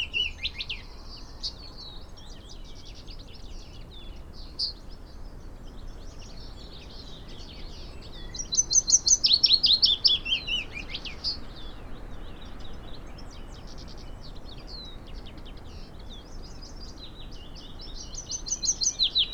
Green Ln, Malton, UK - willow warbler song soundscape ...
willow warbler soundscape ... song and calls ... xlr sass in crook of tree to zoom h5 ... bird song ... calls from ... dunnock ... blackcap ... wren ... yellowhammer ... chaffinch ... blackbird ... pheasant ... blackcap ... fieldfare ... crow ... willow warblers arrived on thursday ...
16 April 2022